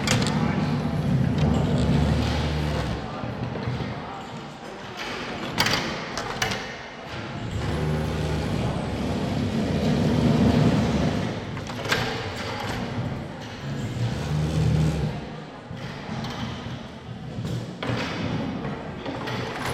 Dehrn, public hall, after funeral feast - after funeral feast, men moving chairs & tables
wed 06.08.2008, 17:00
after funeral feast in public hall, men moving chairs and tables back to the store